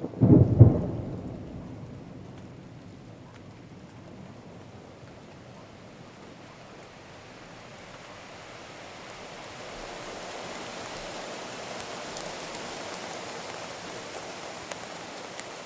koeln, beginning thunderstorm - koeln, descending thunderstorm, very hard rain
recorded june 22nd, 2008, around 10 p. m.
project: "hasenbrot - a private sound diary"